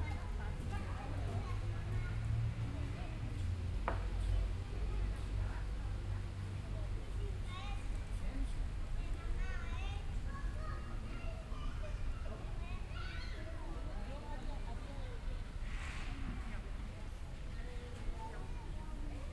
Children playing at Turó Parc in Barcelona